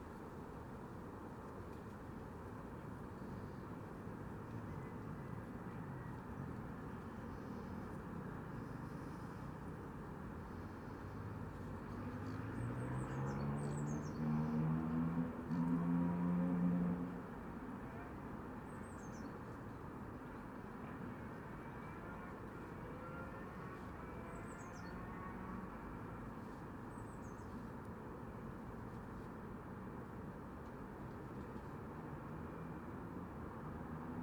Kingfisher Boulevard, Newcastle upon Tyne, UK - Footpath at back of Newburn Riverside Business Park
Wooded footpath at back of Business Park. Recorded under a stand of pines. Bird song and traffic noise. Recorded on a handheld Tascam DR-05 placed in a WeatherWriter clipboard to act as a windshield.